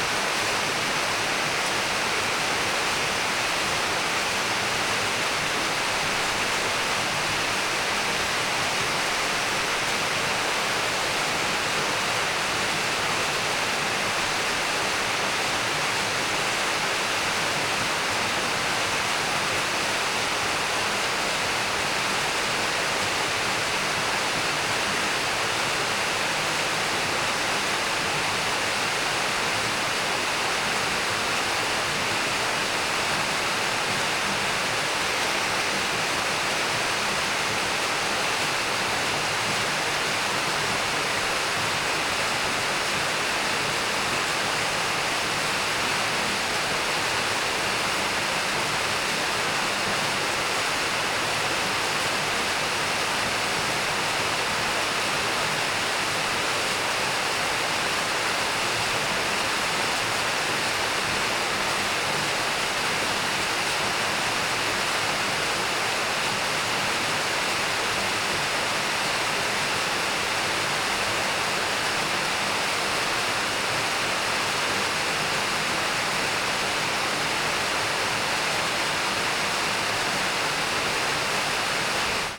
Barrage de Thurins - bas
Barrage de Thurins
Au pied du barrage
Thurins, France, 7 November 2010, ~17:00